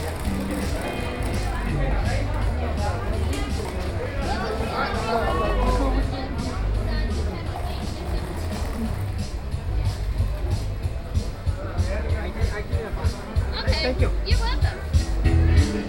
Austin, Austin Country Flea Market, Tutti frutti
USA, Texas, Austin, Austin Country Flea Market, Flea Market, redneck, Tutti frutti, Guitarist, Binaural